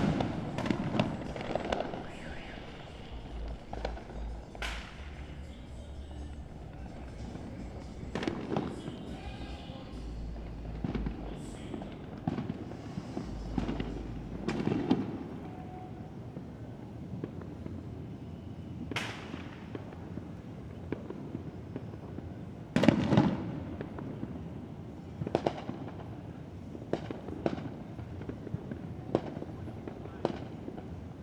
George St, Flushing, NY, USA - Ridgewood neighborhood celebrating the 4th of July.
Ridgewood neighborhood celebrating the 4th of July.